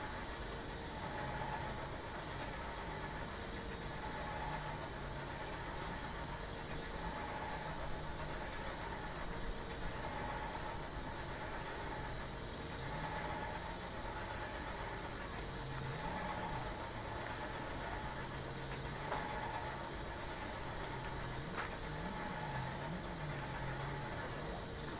{
  "title": "Unnamed Road, Cullera, Valencia, España - Sonido del radar",
  "date": "2021-08-09 18:30:00",
  "description": "Sonido del Radar de vigilancia marítima situado en lo alto de la montaña de Cullera, junto a la estación meteorológica. Es una zona de rutas de senderismo y suelen subir muchas personas hasta este lugar donde hay unas vistas muy bonitas de los arrozales y el mar Mediterráneo.",
  "latitude": "39.18",
  "longitude": "-0.25",
  "altitude": "217",
  "timezone": "Europe/Madrid"
}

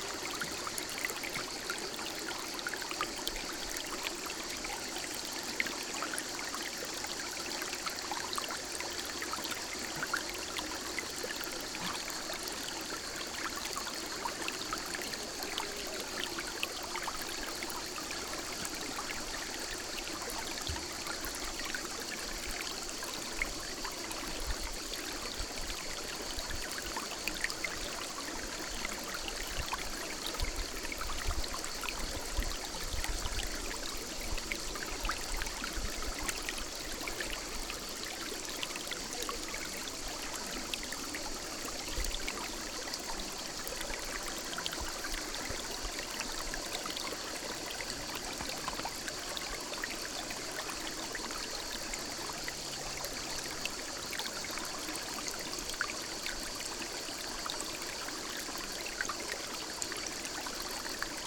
{
  "title": "Unnamed Road, Peypin-dAigues, France - La source du Mirail",
  "date": "2020-07-19 17:25:00",
  "description": "Le doux clapotis de l'eau de la source du Mirail à l'ombre des arbres",
  "latitude": "43.79",
  "longitude": "5.54",
  "altitude": "464",
  "timezone": "Europe/Paris"
}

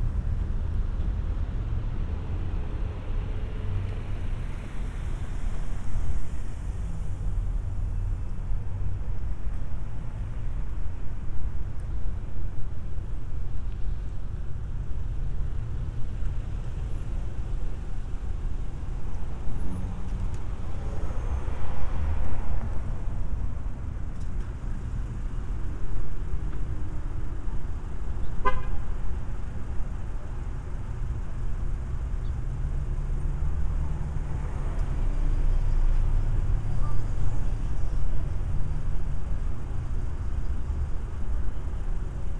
{
  "title": "S Cobb Dr SE, Smyrna, GA - Great Clips parking lot",
  "date": "2021-01-20 17:02:00",
  "description": "The parking lot in front of a Great Clips, which is part of a larger shopping center. Lots of vehicle sound can be heard, including car horns and some sirens near the end of the recording. There are also some less prominent sounds from the surrounding stores and from the people walking in the parking lot.\n[Tascam Dr-100mkiii & Roland CS-10EM binaural mics w/ foam covers & fur for wind reduction]",
  "latitude": "33.84",
  "longitude": "-84.50",
  "altitude": "310",
  "timezone": "America/New_York"
}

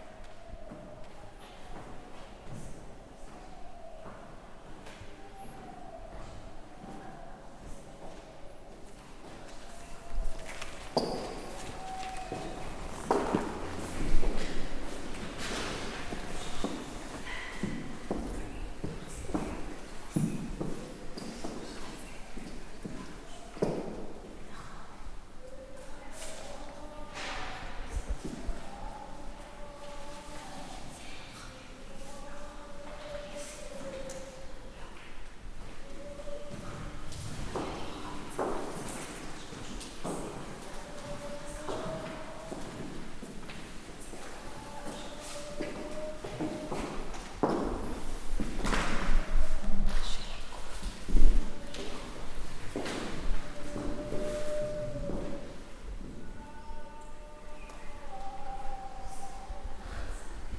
{
  "title": "Tsadok ha-Cohen St, Tel Aviv-Yafo, Israel - CCA, Tel Aviv",
  "date": "2019-03-22 12:00:00",
  "description": "CCA, contemporary center of art. Tel Aviv.",
  "latitude": "32.07",
  "longitude": "34.77",
  "altitude": "14",
  "timezone": "Asia/Jerusalem"
}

